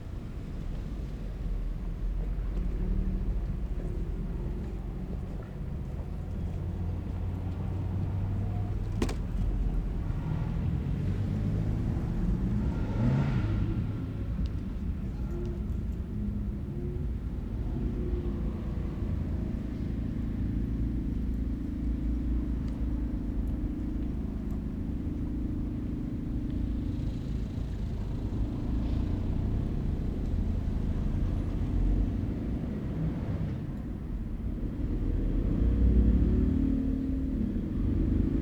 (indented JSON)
{
  "title": "Berlin: Vermessungspunkt Friedelstraße / Maybachufer - Klangvermessung Kreuzkölln ::: 17.12.2010 ::: 17:59",
  "date": "2010-12-17 17:59:00",
  "latitude": "52.49",
  "longitude": "13.43",
  "altitude": "39",
  "timezone": "Europe/Berlin"
}